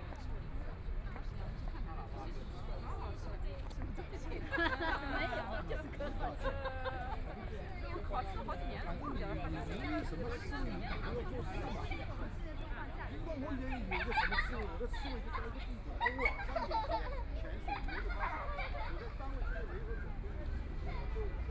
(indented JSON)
{
  "title": "People's Square park, Huangpu District - walking in the park",
  "date": "2013-11-23 16:53:00",
  "description": "walking in the park, Toward the exit of the park, Binaural recording, Zoom H6+ Soundman OKM II",
  "latitude": "31.23",
  "longitude": "121.47",
  "altitude": "11",
  "timezone": "Asia/Shanghai"
}